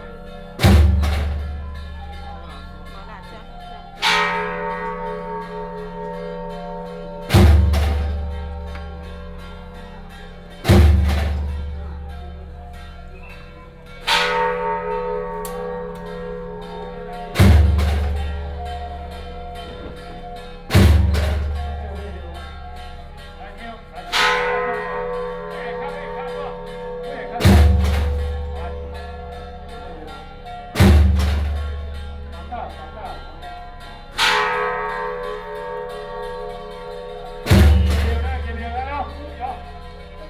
Firecrackers and fireworks, Many people gathered In the temple, Matsu Pilgrimage Procession